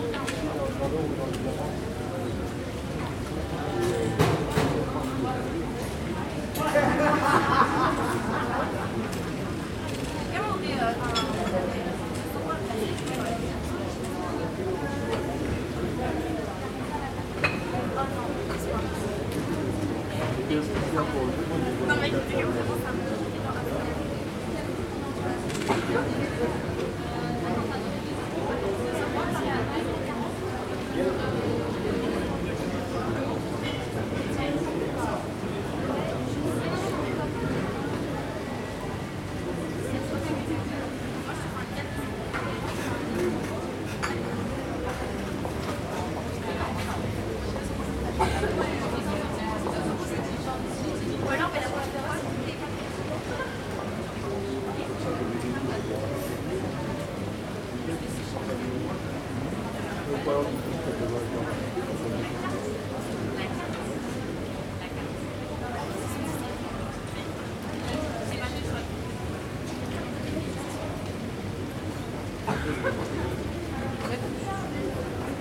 Fontaine de la Trinité, Pl. de la Trinité, Toulouse, France - coffee place
place, coffee, people talk, traffic, street, people walk
Occitanie, France métropolitaine, France, 5 November